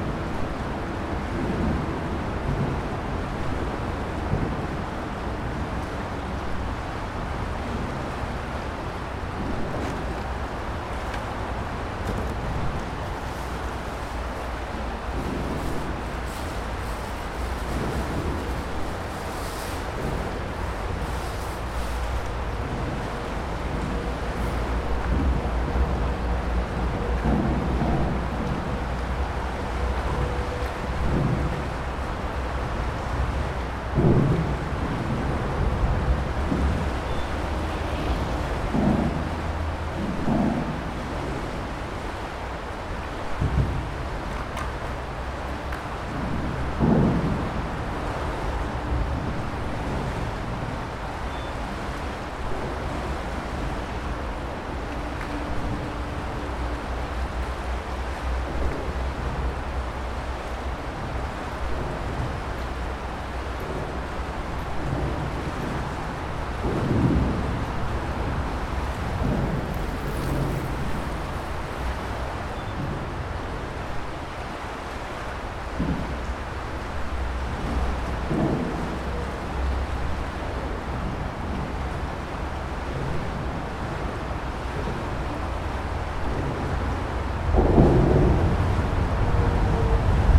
La Motte-Servolex, France - Sous le pont
Sur la piste cyclable qui mène à Chambéry, l'Avenue Verte arrêt pour écouter ce qui se passe sous le pont de l'A41 et tester l'acoustique.